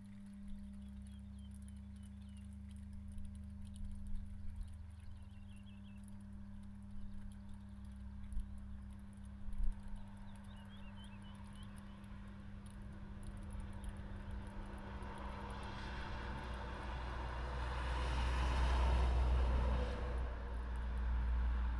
Kehra alajaam, Paasiku, Harju maakond, Estonia - Melting snow
Snow is melting and dripping from the roof. An electrical substation can be heard in the background. Trucks are passing by.